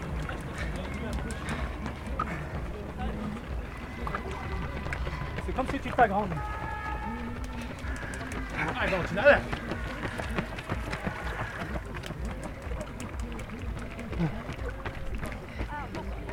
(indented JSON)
{
  "title": "Bd Jean Charcot, Tresserve, France - Arrivée course",
  "date": "2022-09-04 10:00:00",
  "description": "Près du lac à 500m de l'arrivée de la course à pied des 10km du lac organisée par l'ASA Aix-les-bains les belles foulées des premiers concurrents, certains sont plus ou moins épuisés par la distance, polyrythmie des groupes, les clapotis de l'eau se mêlent aux applaudissements du public.",
  "latitude": "45.69",
  "longitude": "5.90",
  "altitude": "503",
  "timezone": "Europe/Monaco"
}